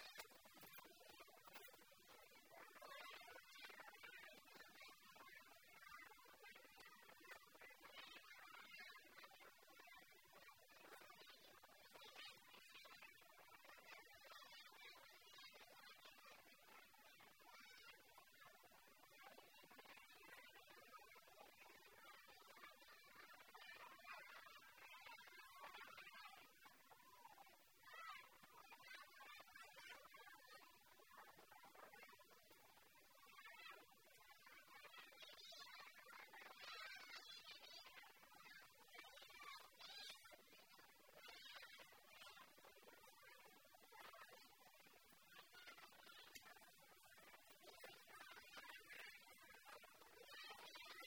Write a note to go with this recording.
India, Karnataka, Bijapur, Siva Murati, Shiva, Playground, children, This 85 feet cement and steel idol at Rambapur village 3Kms from City of Bijapur on the Ukkali road was unveiled on Feb 26th 2006 the auspicious day of Shivarathri. Sculptors from Shimoga toiled for 13 months to create the idol based on the design provided by the civil engineers from Bangalore.